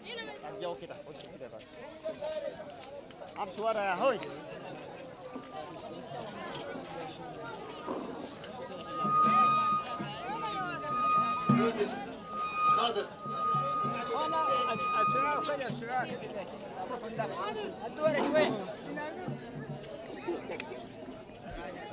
شارع الراشدين, Sudan - dhikr frag @ tomb sheikh Hamad an-Neel
Every friday dikhr at the tomb of sjeikh Hamad an-Neel in the outskirts of Omdourman. This is rec in 1987, before the orthodox took over control.
ولاية الخرطوم, السودان al-Sūdān, 18 May 1987